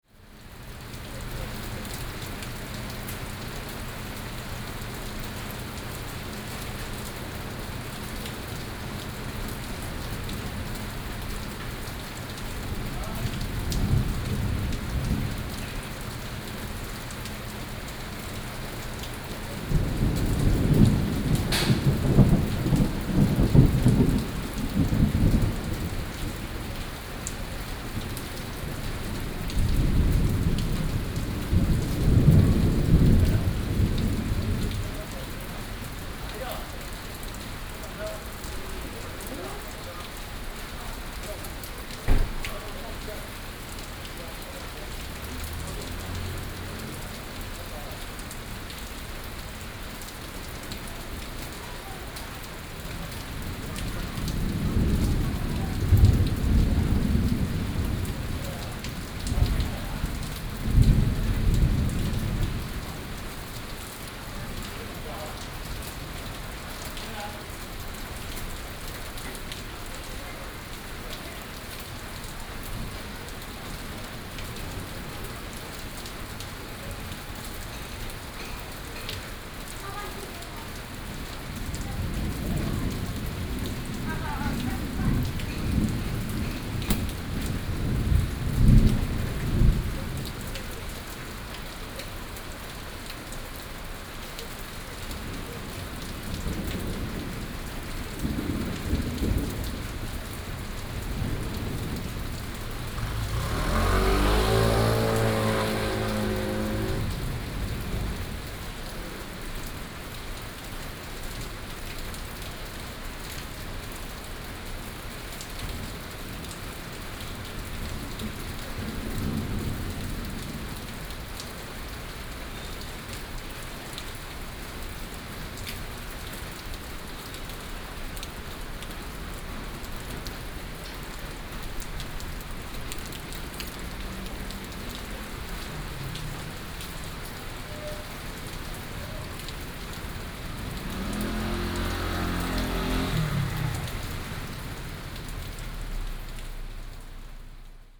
Aly., Ln., Sec., Xinyi Rd., Da’an Dist., Taipei City - Thunderstorms
Thunderstorms
Binaural recordings
Sony PCM D100+ Soundman OKM II
23 July, ~14:00